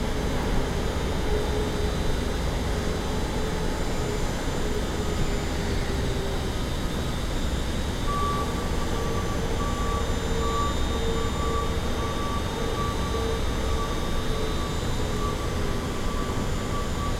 Charleroi, Belgium - Industrial soundscape
Industrial soundscape near the Thy-Marcinelle wire-drawing plant. Near the sluice, in first a bulldozer loading slag, after a boat entering (and going out) the sluice. The boat is the Red Bull from Paris, IMO 226001090.
15 August 2018, ~08:00